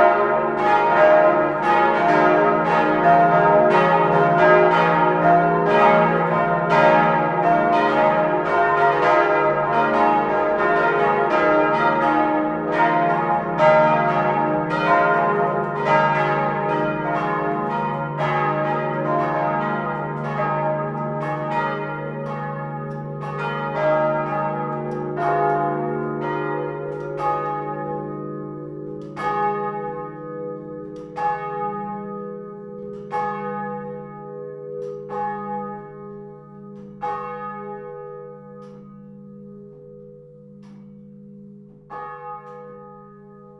essen, old catholic church, bells
The new bells of the old catholic church also known as Friedenskirche recorded directly in the bell tower.
Projekt - Klangpromenade Essen - topographic field recordings and social ambiences